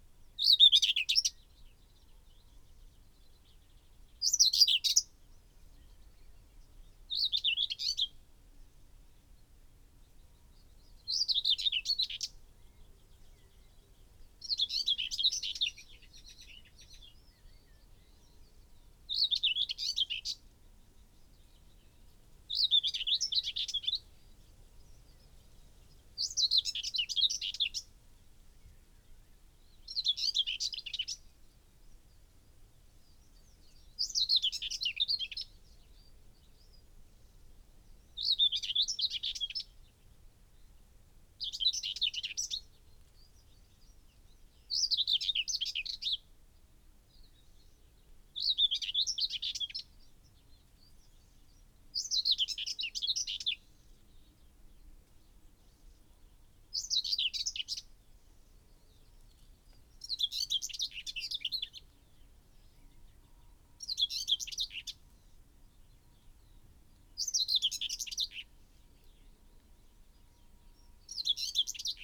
Malton, UK - whitethroat song soundscape ...
whitethroat song soundscape ... dpa 4060s clipped to bag to zoom h5 ... bird calls ... song ... from ... yellowhammer ... blackbird ... linnet ... crow ... wren ... dunnock ... chaffinch ... blackcap ... wood pigeon ... possible nest in proximity as song and calls ... male visits various song posts before returning ... occasional song flight ... unattended time edited extended recording ...